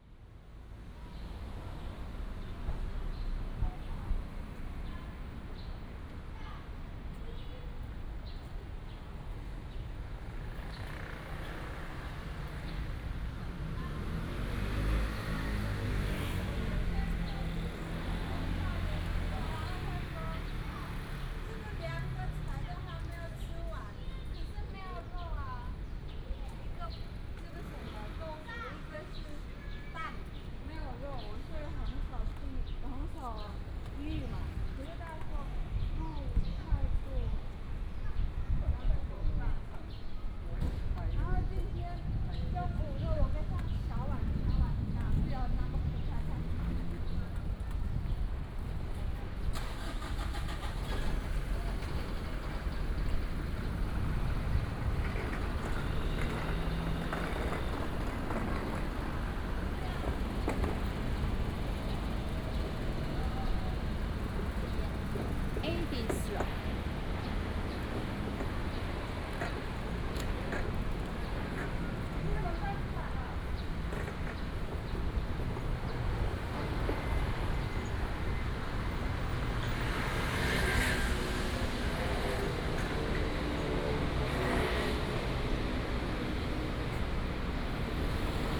Hot weather, Starting from the alley toward the main road, Traffic noise